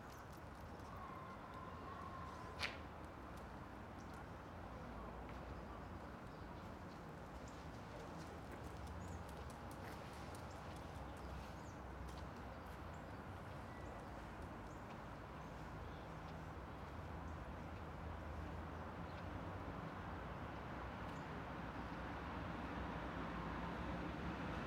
Park Vladimira Nazora, Rijeka, Croatia - Dog